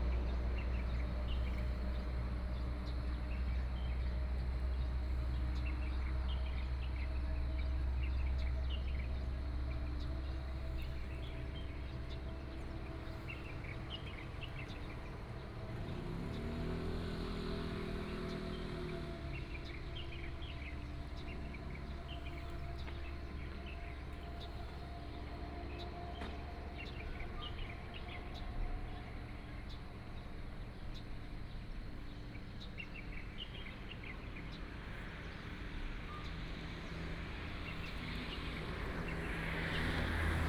Zhishan Rd., Taimali Township - Beside the road
in the morning, birds sound, traffic sound, Chicken cry